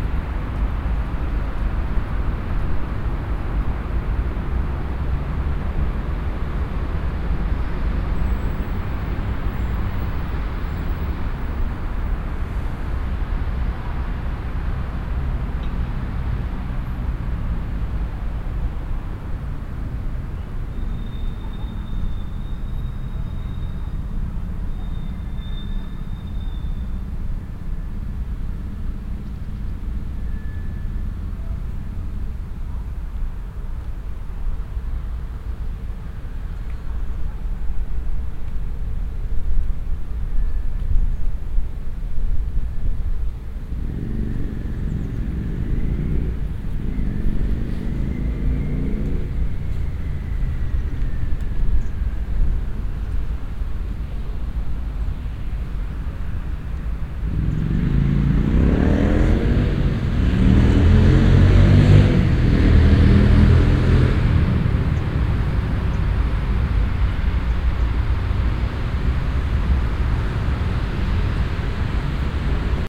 cologne, mediapark, brücke
On the bridge that leads over a small artificial lake on a late afternoon. Some mellow wind and some passengers.
soundmap nrw - social ambiences - sound in public spaces - in & outdoor nearfield recordings
mediapark, bridge, September 2008